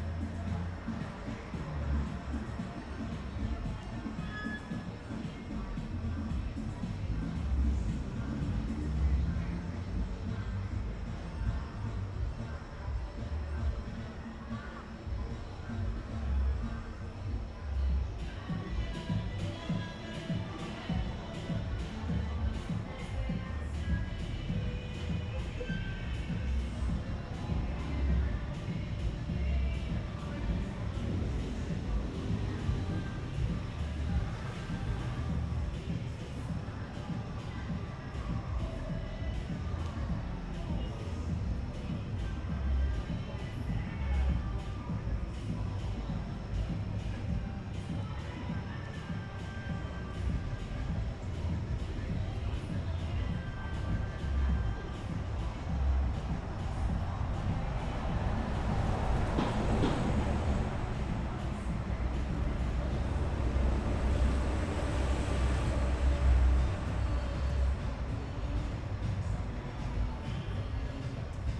Toma de audio / Paisaje sonoro grabado con la grabadora Zoom H6 y el micrófono XY a 120° de apertura en horas de la noche. Se puede percibir el sonido de la música de un bar cercano al punto de grabación, algunas personas hablando y el motor de un coche que se enciende y se pone en marcha a pocos metros del punto de grabación.
Grabador: Andrés Mauricio Escobar
Sonido tónico: Música de bar cercano
Señal Sonora: Alarma y encendido de automóvil